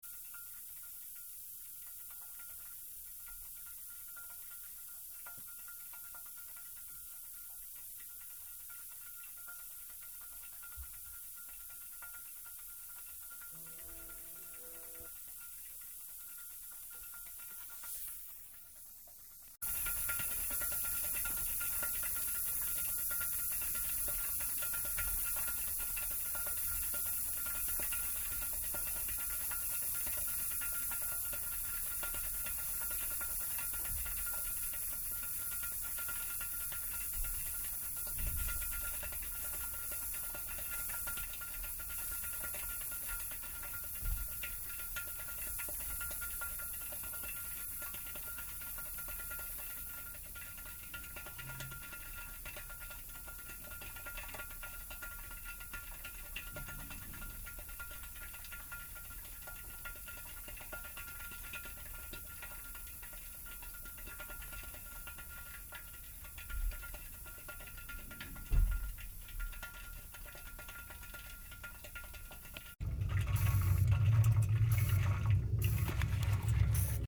Geary St, RM, San Francisco, CA, USA - Room heater
Small dorm room with a heat radiator by the window, it also has a steam valve to release steam. Does this 3 times a day.
27 September